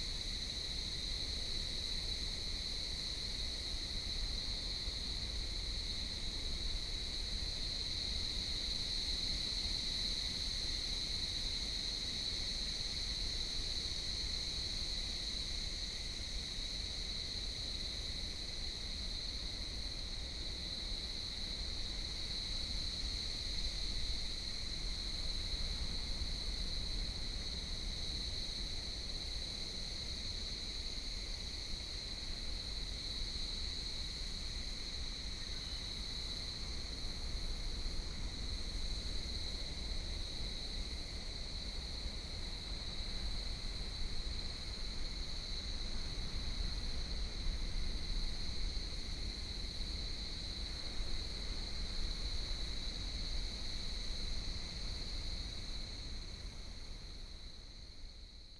In the woods, Cell phone interference signal, Sound of the waves, traffic sound, The sound of cicadas
2 April 2018, ~14:00